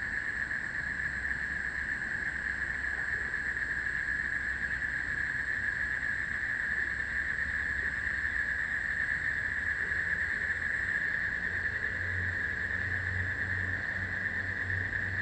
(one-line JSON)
{"title": "桃米紙教堂, 南投縣埔里鎮桃米里 - Next to the lotus pond", "date": "2016-06-07 20:10:00", "description": "Frogs chirping, motorcycle, Next to the lotus pond, Dogs barking\nZoom H2n MS+XY", "latitude": "23.94", "longitude": "120.93", "altitude": "468", "timezone": "Asia/Taipei"}